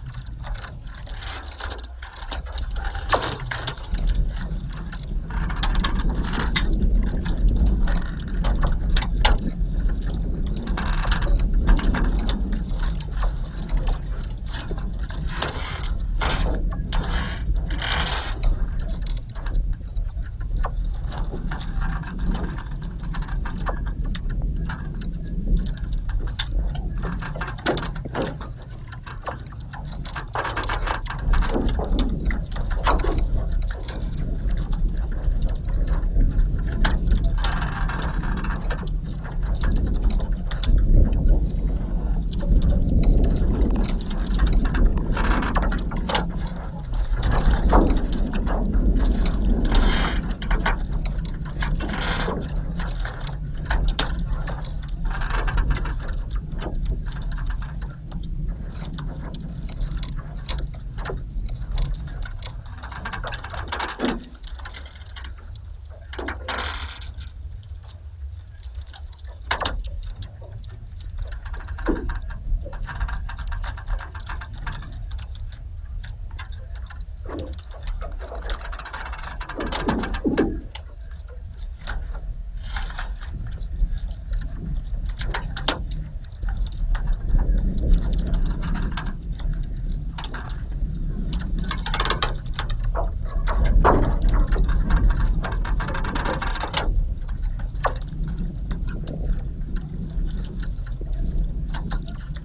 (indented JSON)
{
  "title": "Šlavantai, Lithuania - Frozen pond slowly melting",
  "date": "2020-12-21 17:00:00",
  "description": "Contact microphone recording of a frozen pond at melting temperature. Four microphones were used and mixed together. Various ice cracking sounds are heard together with gushes of wind blowing along the surface.",
  "latitude": "54.16",
  "longitude": "23.66",
  "altitude": "144",
  "timezone": "Europe/Vilnius"
}